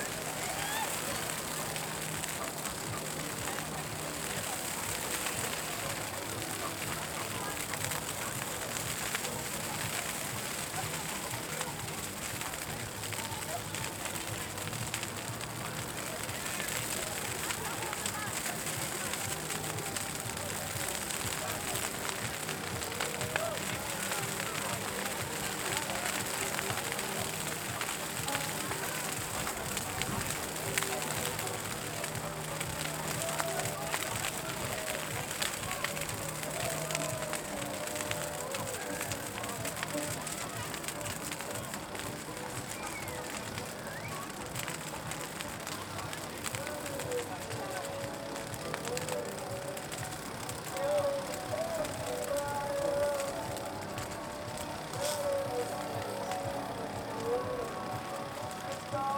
ish, South Africa - Pipe Dreams Burn
Inner perimeter perspective of the burning oif the art piece Pipe Dreams at 2019 Afrikaburn. Recorded in ambisonic B Format on a Twirling 720 Lite mic and Samsung S9 android smartphone
Northern Cape, South Africa, April 2019